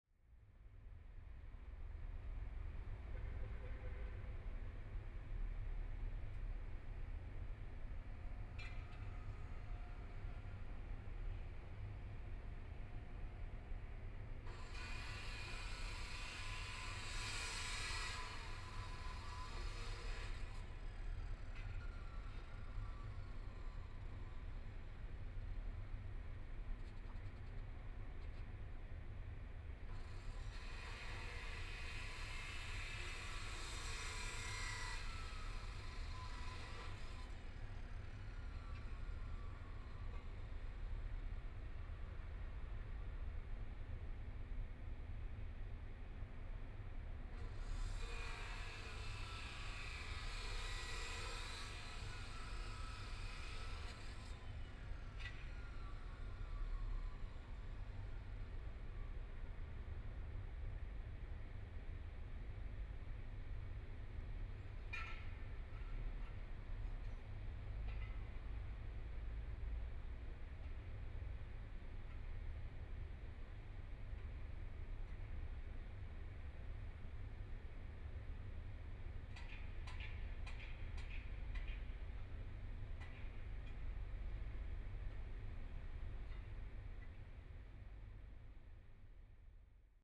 The Seagull on The Bund, Shanghai - Strange noise
Strange noise, Binaural recording, Zoom H6+ Soundman OKM II
Shanghai, China